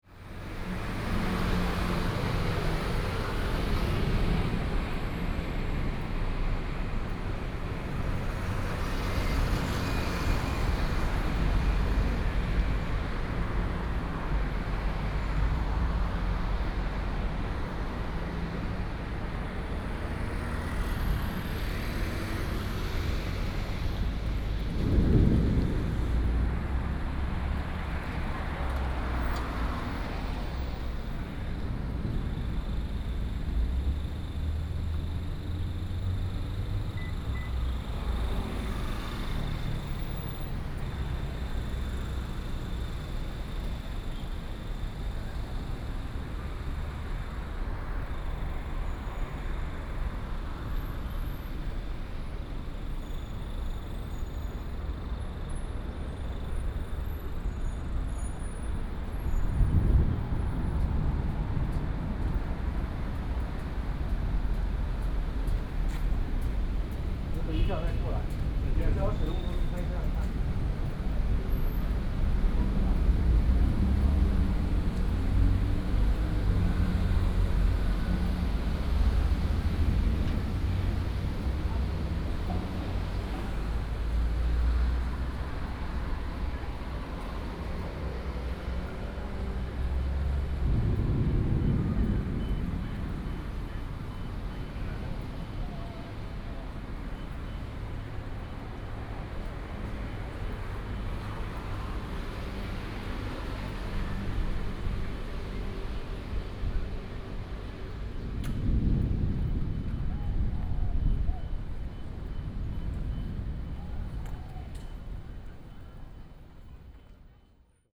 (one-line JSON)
{"title": "Sec., Xinyi Rd., Da’an Dist., Taipei City - Walking on the road", "date": "2015-07-23 13:14:00", "description": "walking in the Street, Traffic noise, Sound of thunder", "latitude": "25.03", "longitude": "121.56", "altitude": "24", "timezone": "Asia/Taipei"}